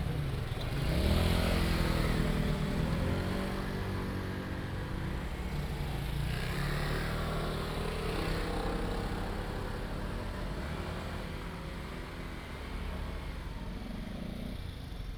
普義里, Zhongli Dist., Taoyuan City - Small railway crossroads
Small railway crossroads, traffic sound, The train runs through
Zhongli District, Taoyuan City, Taiwan, 20 August 2017